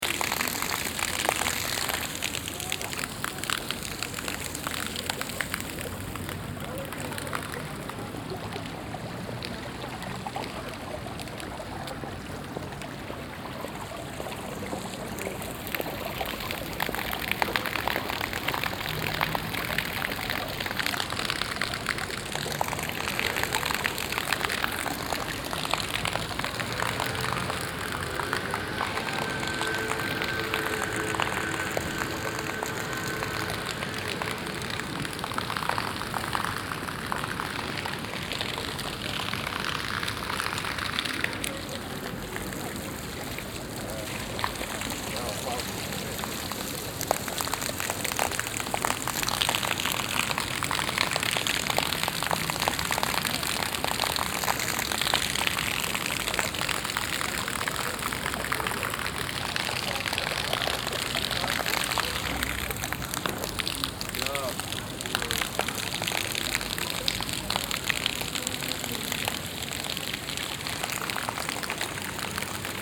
Stadtkern, Essen, Deutschland - essen, kettwigerstr, water play fountain
At the city pedestrian area. The sound of a playful water fountain, that can be activated by the feet.
In der City Fussgängerzone. Der Klang eines Wasserspiels, das mit den Füßen aktiviert werden kann. Im Hintergrund Passanten und Verkehr von einer kleinen Nebenstraße.
Projekt - Stadtklang//: Hörorte - topographic field recordings and social ambiences
Essen, Germany, 4 April, 15:10